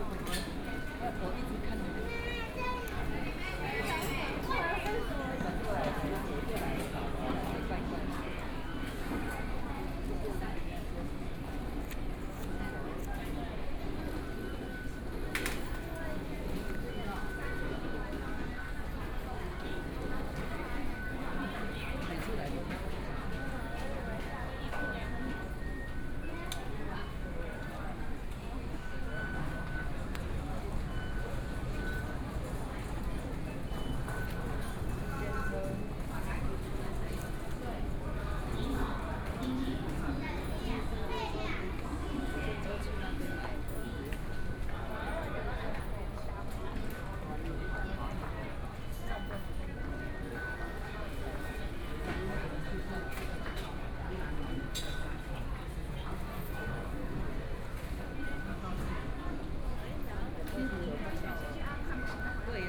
Taipei Main Station, Taiwan - soundwalk
walking out of the MRT station platform, Then to the railway counter
Binaural recordings, ( Proposal to turn up the volume )
Sony PCM D50+ Soundman OKM II
Zhongzheng District, Breeze Taipie Station, 台北車站(東三), 24 July, 15:24